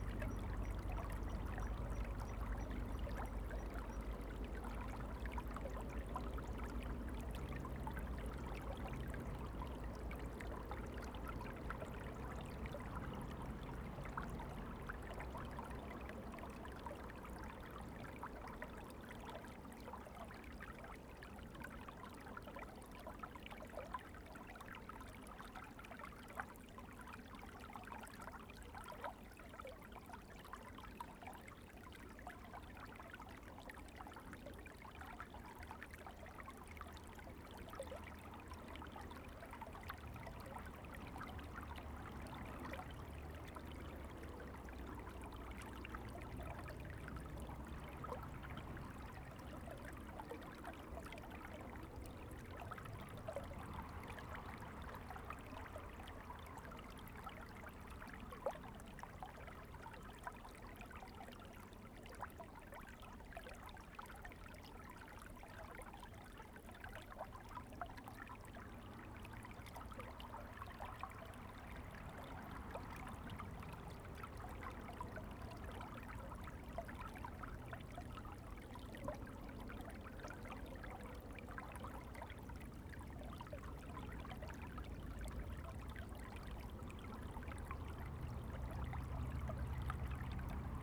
In the rice fields, Traffic Sound, The sound of water, Streams waterway, Very hot weather
Zoom H2n MS+ XY
Taitung County, Taiwan